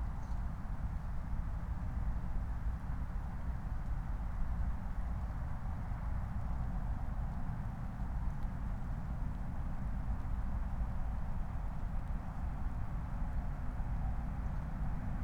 Moorlinse, Berlin Buch - near the pond, ambience
18:19 Moorlinse, Berlin Buch
Deutschland